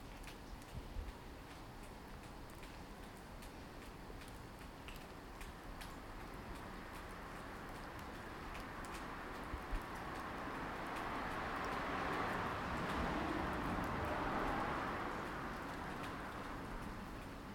Starbeck Ave, Newcastle upon Tyne, UK - Rain, birdsong, Starbeck Avenue
Walking Festival of Sound
13 October 2019
Rain and quiet birdsong.